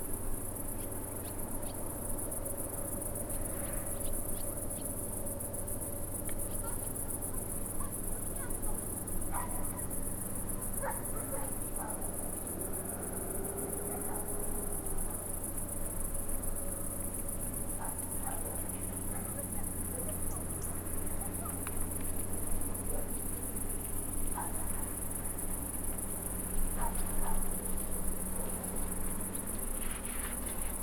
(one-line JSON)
{"title": "Szczęsne, Pole-tory - Village turned to suburb", "date": "2009-09-08 18:43:00", "description": "Crickets, dog barking, some ordinary suburb noises from distance.", "latitude": "53.74", "longitude": "20.56", "altitude": "122", "timezone": "Europe/Warsaw"}